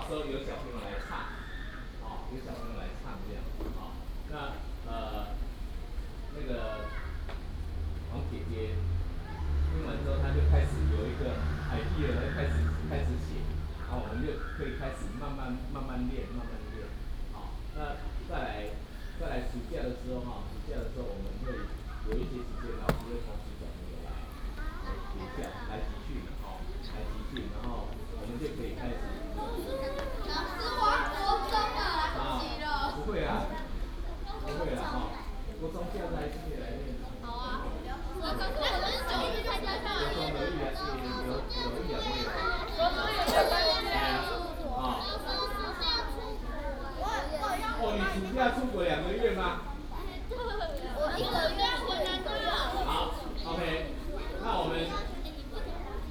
埔里國小, Puli Township - Vocal exercises
Students Choir, Vocal exercises
19 May, 08:26